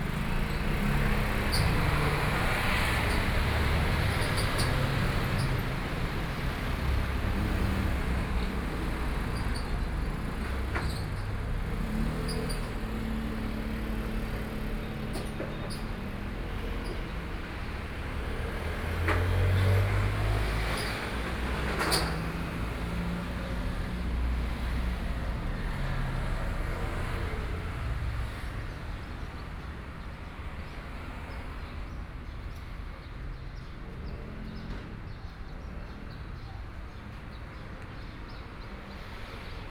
Sec., Yuanshan Rd., Yuanshan Township - Traffic Sound
Traffic Sound, At the roadside, In front of the convenience store
Sony PCM D50+ Soundman OKM II
25 July 2014, 13:13